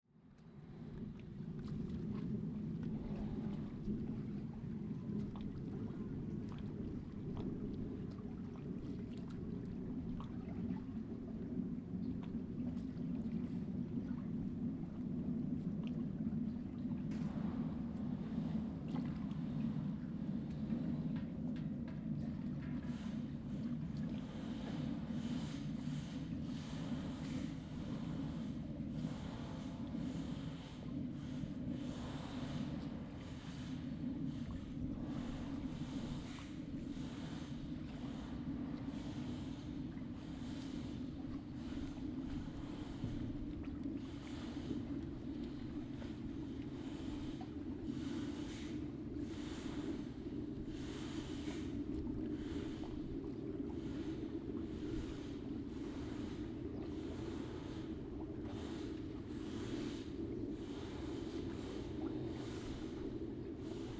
沙港遊樂碼頭, Huxi Township - In the dock
In the dock, Waves and tides, Construction noise
Zoom H2n MS+XY
Penghu County, Taiwan, 22 October 2014